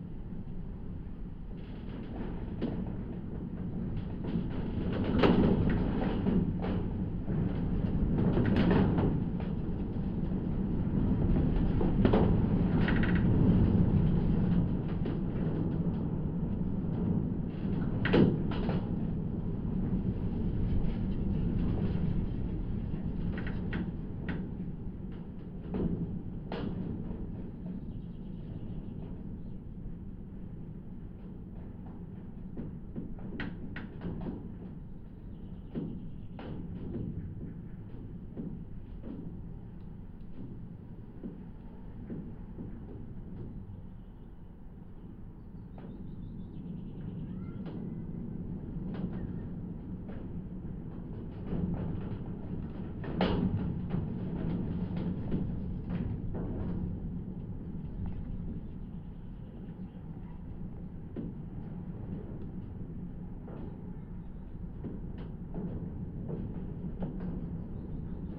Antakalnis, Lithuania, the hangar
windy day, little aeroport: contact microphones and geophone placed on metallic hangar